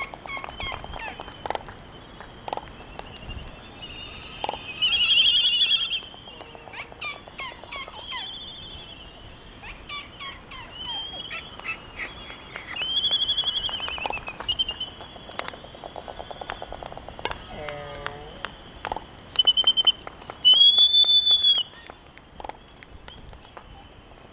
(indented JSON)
{
  "title": "United States Minor Outlying Islands - Laysan albatross dancing ...",
  "date": "1997-12-27 11:15:00",
  "description": "Laysan albatross dancing ... Sand Island ... Midway Atoll ... calls and bill clapperings ... open Sony ECM 959 one point stereo mic to Sony Minidisk ... warm ... sunny ... blustery morning ...",
  "latitude": "28.22",
  "longitude": "-177.38",
  "altitude": "14",
  "timezone": "Pacific/Midway"
}